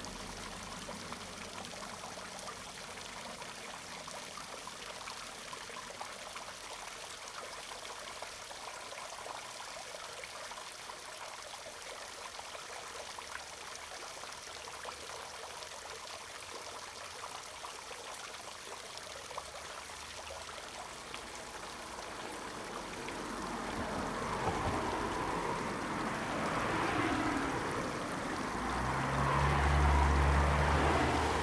In the next breeding pond, Traffic Sound, Hot weather
Zoom H2n MS+XY